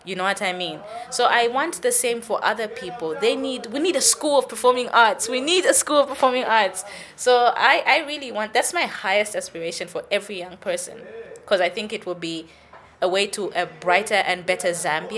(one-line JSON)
{"title": "Joy FM studios, floor at Kulima Tower, Lusaka, Zambia - Petronella Kalimbwe celebrates her Mum", "date": "2012-08-02 16:06:00", "description": "A popular DJ with Joy FM in Lusaka, Petronella uses her radio platform and popularity among young listeners to raise awareness for African and Zambian culture in her radio show The Dose and dedicated programmes like Poetic Tuesday.", "latitude": "-15.42", "longitude": "28.28", "altitude": "1281", "timezone": "Africa/Lusaka"}